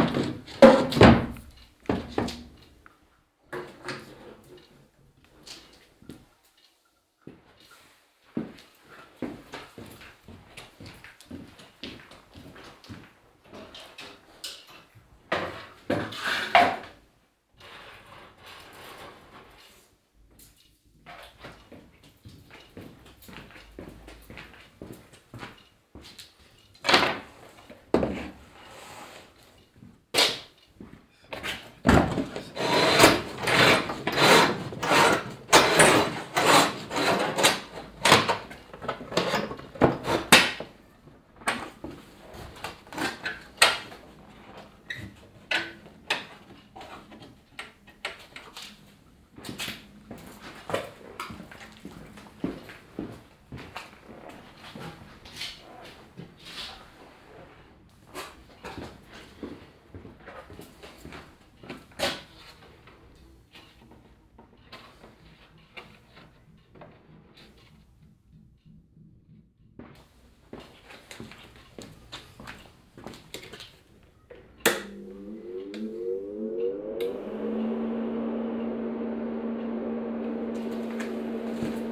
Neustadt-Süd, Köln, Deutschland - cologne, kyllstraße. metal workshop
Inside a metal workshop. The sound of steps, preparation of tools, switch on of an electric engine, the engine fan and the sound of velving and hammering metal.
soundmap nrw - social ambiences and topographic field recordings
Cologne, Germany, 30 May 2012, 12:00